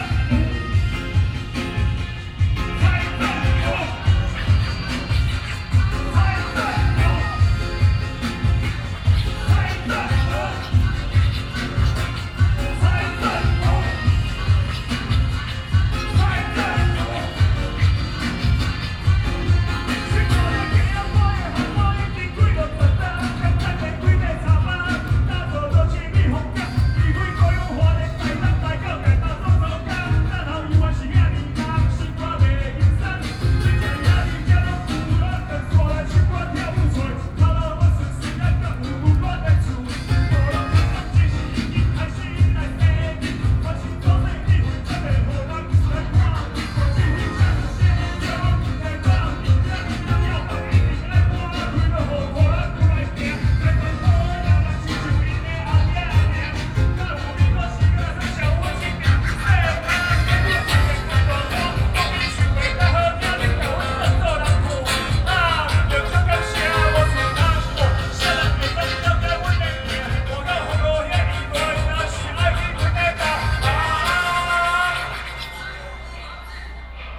Rock band performing songs and shouting slogans to protest, Sony PCM D50 + Soundman OKM II